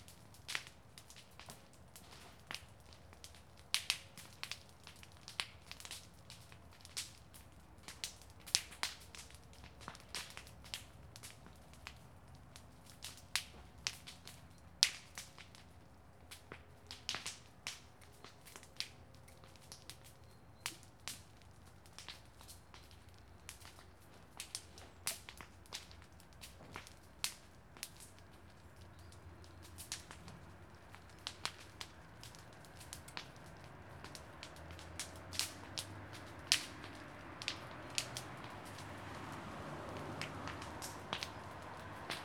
Rheinfelden, Cranachstrasse - sticks and leaves pop
man burning a pile of shriveled leaves and dry sticks in a garden.
Rheinfelden, Germany, 11 September 2014, 11:15